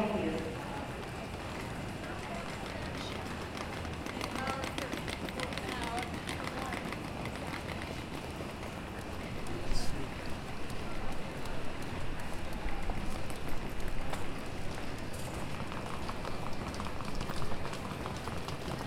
North Capitol Street, Washington, DC, USA - Union Station Gate A
Union Station Gate A
Thursday afternoon, the train was delayed.
People were surrounding by the gate
many traveller were passing by.
15 December